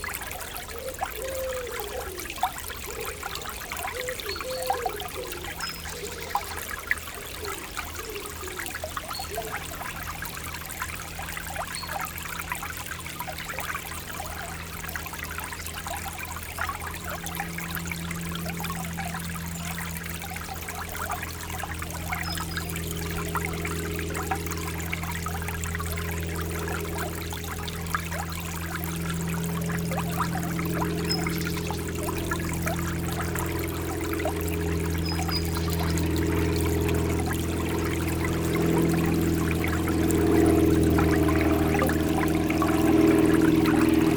Chaumont-Gistoux, Belgique - The Train river
It's a funny name for a river, but there's nothing about a train there ! The river is called Train. It's a small stream inside a quiet district.